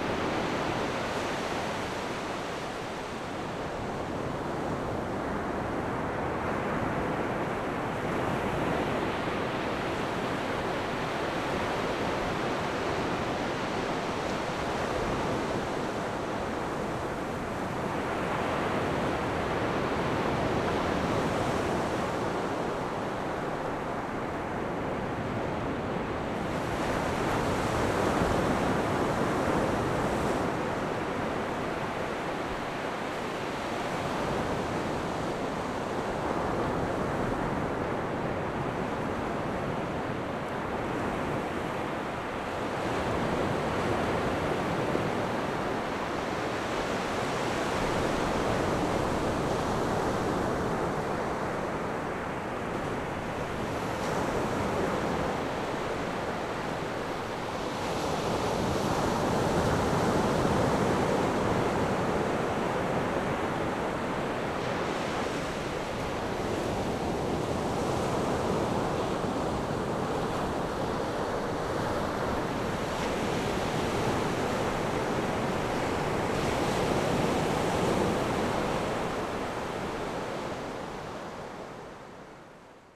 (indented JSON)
{
  "title": "坂里沙灘, Beigan Township - At the beach",
  "date": "2014-10-15 11:43:00",
  "description": "At the beach, Sound of the waves\nZoom H6 +RodeNT4",
  "latitude": "26.21",
  "longitude": "119.98",
  "altitude": "1",
  "timezone": "Asia/Taipei"
}